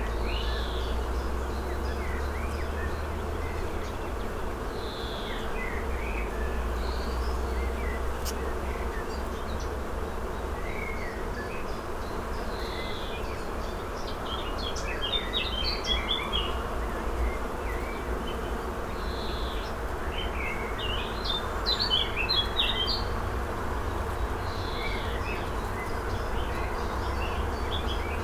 opencast / Tagebau Hambach, Niederzier, Germany - humming tree
at the edge of opencast Tagebau Hambach, near village Niederzier, bees in a hazelnut tree, distant traffic
(Sony PCM D50)
2 July 2013, ~20:00